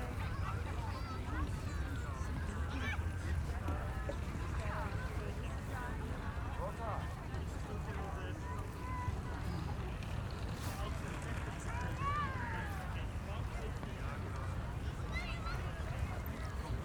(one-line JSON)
{"title": "Tempelhofer Feld, Berlin - urban gardening project", "date": "2012-07-08 20:25:00", "description": "walk through the self organized urban gardening project at former Tempelhof airport, on a beautiful summer sunday evening.\n(SD702, DPA4060)", "latitude": "52.47", "longitude": "13.42", "altitude": "48", "timezone": "Europe/Berlin"}